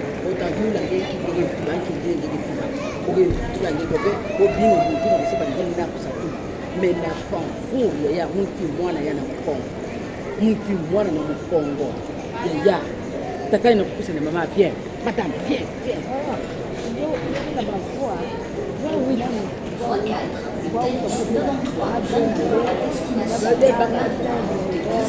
Brussel, Belgium - Brussels Midi station
Ambiance of one of the biggest train station of Brussels : the Bruxelles-Midi (french) or Brussel-Zuid (dutch). A walk in the tunnels, platform, a train leaving to Nivelles, escalator, the main station and going outside to Avenue Fonsny road.
25 August